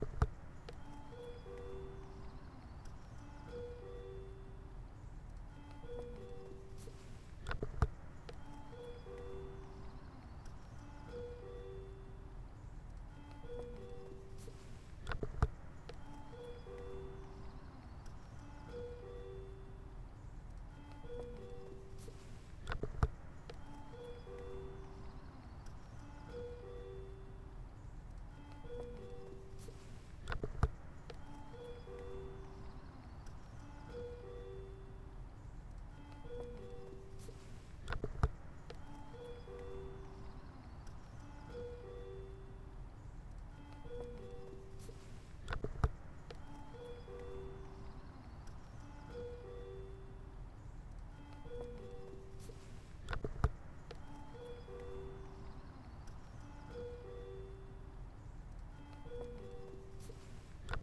La sonnette de l'entrée de l'Ecole d'Art de Saint-Nazaire